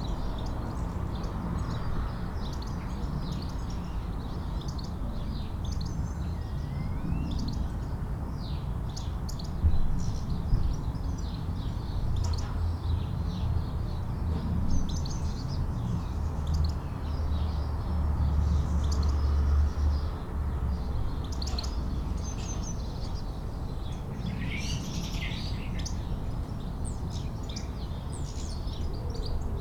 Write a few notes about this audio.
inside church porch ... waiting for nine o'clock ... All Saints Church ... lavalier mics clipped to sandwich box ... the church clocks strikes nine at 05:12 ... bird calls ... song from ... dunnock ... starling ... blue tit ... collared dove ... blackbird ... goldfinch ... crow ... house sparrow ... robin ... wood pigeon ... jackdaw ... background noise ...